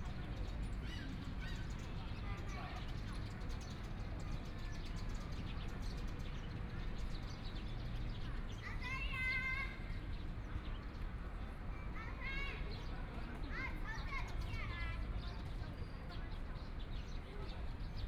Afternoon sitting in the park, Traffic Sound, Sunny weather
Please turn up the volume a little
Binaural recordings, Sony PCM D100 + Soundman OKM II